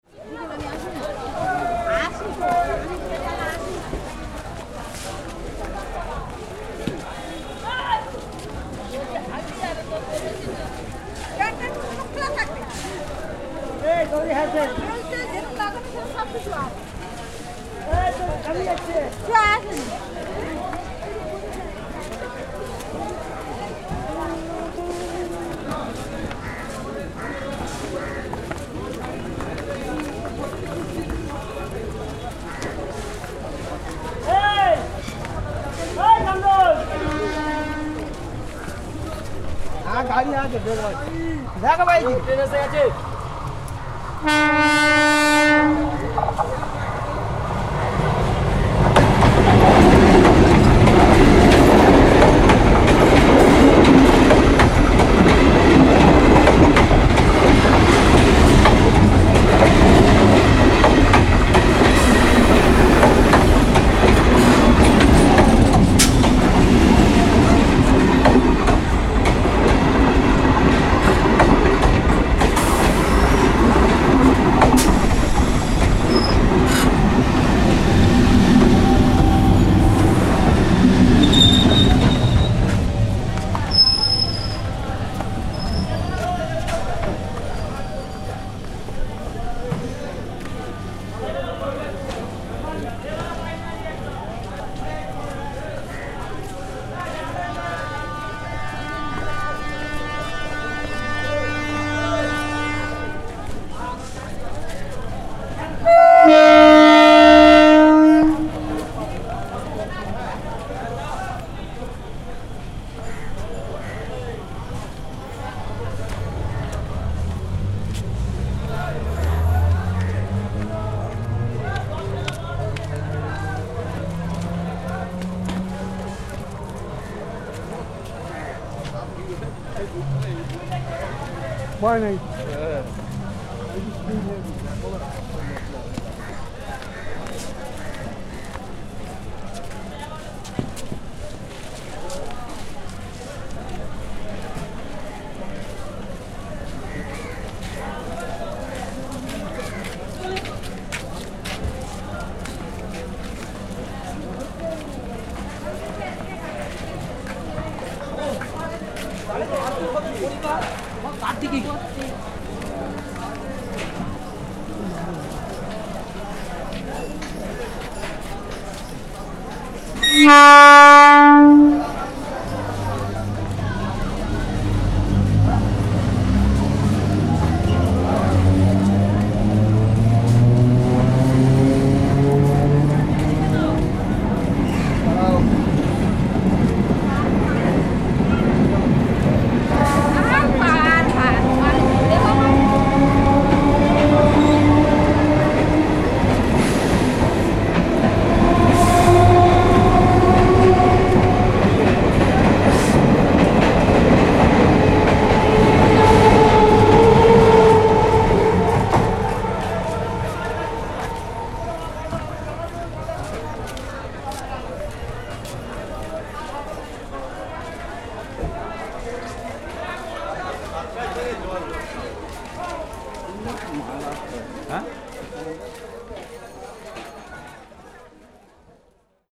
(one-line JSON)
{"title": "Jadavpur Station, Kolkata, West Bengal, India - Jadavpur station platform ambience", "date": "2013-09-12 06:01:00", "description": "Jadavpur station is a sub urban station on the Sealdah south section within city's municipal area. This section is very busy all the time. This is the most efficient and cheapest way to connect the city with southern areas upto Sunderbans. The train are extremely crowded all the time. And the platforms are very busy. This recording is made early in the morning, at 6 am.", "latitude": "22.50", "longitude": "88.37", "altitude": "7", "timezone": "Asia/Kolkata"}